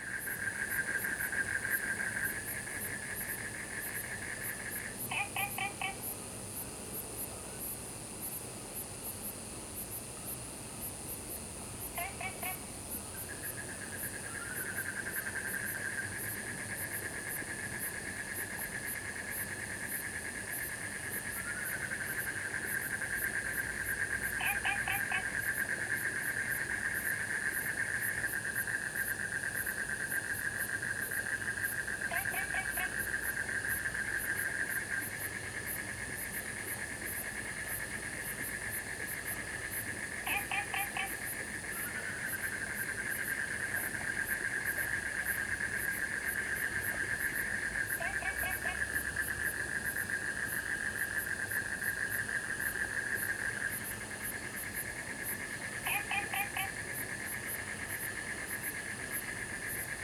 Taomi Ln., Puli Township, 埔里鎮桃米里 - Beside farmland
Beside farmland, Frogs chirping, Insects sounds, The sound of water streams
Zoom H2n MS+XY